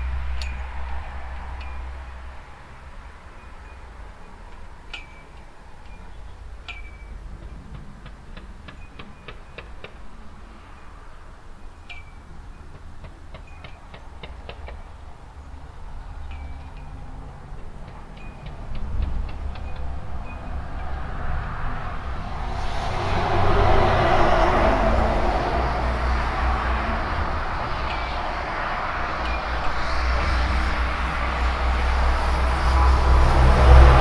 marnach, memorial bell and flags
A small memorial square with an old bell and a group of flags that flatter in the wind while their ropes play the metal pole. Nearby the main road with dense morning traffic and more distant a group of sheeps at a farm yard.
Marnach, Denkmalglocke und Flaggen
Ein kleines Denkmal mit einer alten Glocke und einigen Flaggen, die im Wind flattern, während ihre Seile an ddie Metallpfähle schlagen. Nebenan die Hauptstraße mit dichtem Morgenverkehr und weiter weg eine Gruppe von Schafen auf einem Bauernhof.
Marnach, cloches et drapeau du mémorial
Un petit square commémoratif avec une vielle cloche et un groupe de drapeaux qui flottent au vent tandis que leurs câbles cognent contre le mât en métal. A proximité, la route principale avec un trafic matinal intense et plus loin encore, un groupe de moutons dans la cour d’une ferme.
Marnach, Luxembourg, 13 September